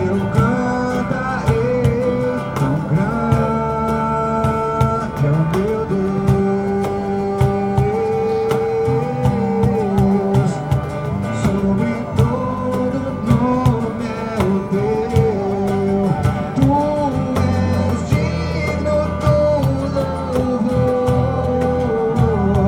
Calçadão de Londrina: Banda: guardas municipais - Banda: guardas municipais / Band: municipal guards
Panorama sonoro: apresentação de uma banda de músicas gospel formada por guardas municipais de Londrina, sábado pela manhã, na Praça Gabriel Martins, em homenagem ao aniversário da guarda. A banda utilizava instrumentos musicais de corda, percussão e vozes amplificados por microfones conectados às caixas de som. Um dos integrantes traduzia as letras das músicas para libras. Ao entorno, várias pessoas acompanhavam a apresentação, cantando junto e aplaudindo ao fim de cada música. De uma loja localizada em frente ao local de apresentação eram emitidas propagandas, músicas e, por vezes locução.
Sound panorama: presentation of a band of gospel songs formed by municipal guards of Londrina, Saturday morning, in Praça Gabriel Martins, in honor of the anniversary of the guard. The band used string musical instruments, percussion and voices amplified by microphones connected to the speakers. One of the members translated the lyrics of the songs into pounds.